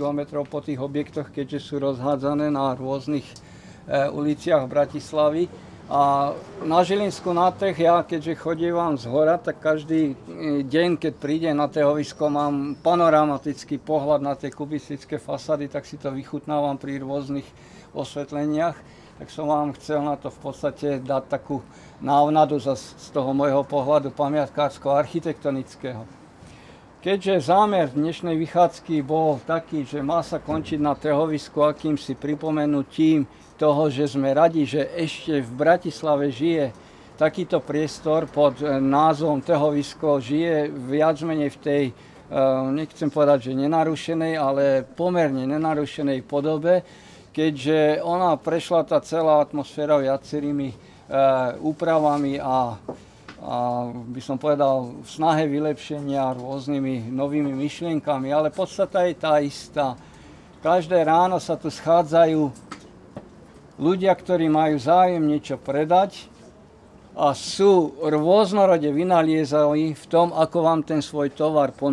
Trhovisko Zilinska
Unedited recording of a talk about local neighbourhood.
Bratislava, Slovakia, 13 June 2014, ~21:00